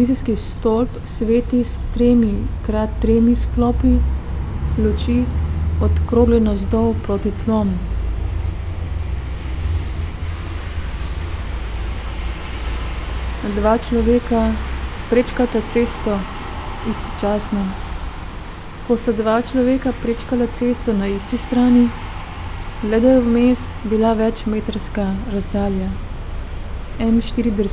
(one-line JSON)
{"title": "writing reading window, Karl Liebknecht Straße, Berlin, Germany - part 16", "date": "2013-05-26 09:16:00", "latitude": "52.52", "longitude": "13.41", "altitude": "47", "timezone": "Europe/Berlin"}